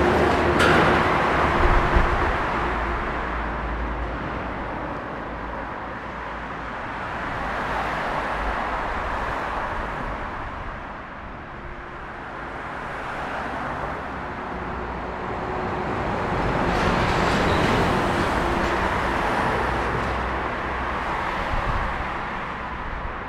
Under overpass, Rzgowska/Śląska, this place is like a tunnel.
Four car and two tramway lanes.
Łódź, Poland, 9 February 2012